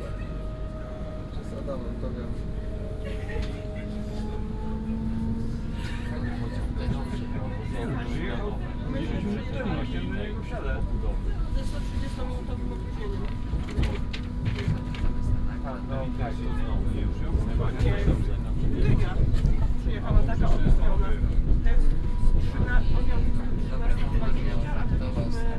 Railway Station, Katowice, Poland - (56) Buying train ticket
Buying train ticket at the Railway Station in Katowice.
binaural recording with Soundman OKM + Zoom H2n
sound posted by Katarzyna Trzeciak